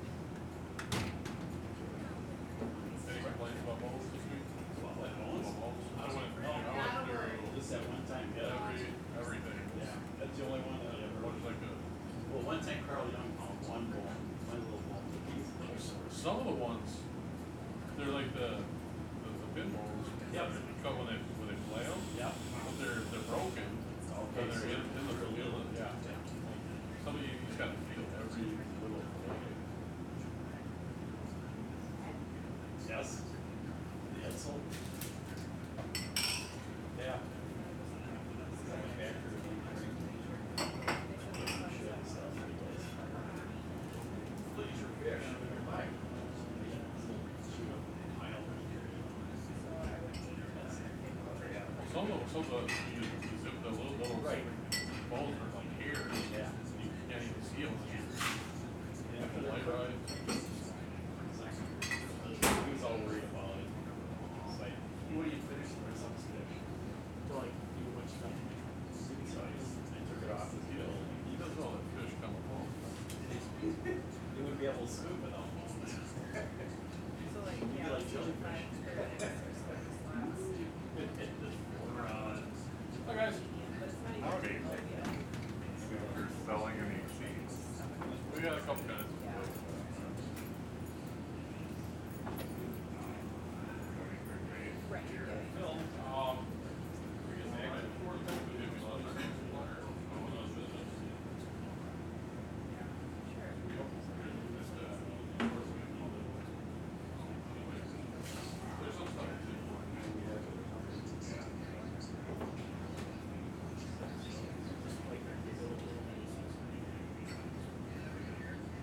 Bass Lake Cheese Factory - Lunch at the Bass Lake Cheese Factory
The sounds of eating lunch inside the Bass Lake Cheese Factory
Wisconsin, United States, March 2022